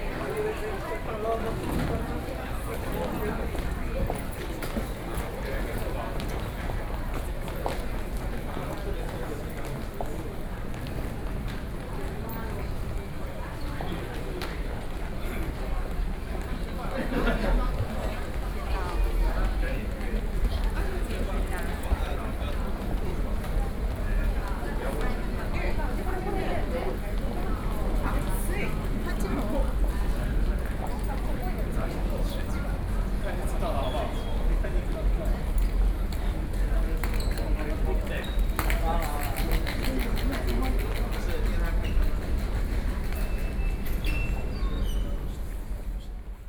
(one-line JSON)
{"title": "Songshan Airport, Songshan District, Taipei City - in the Airport", "date": "2012-11-09 12:53:00", "latitude": "25.06", "longitude": "121.55", "altitude": "9", "timezone": "Asia/Taipei"}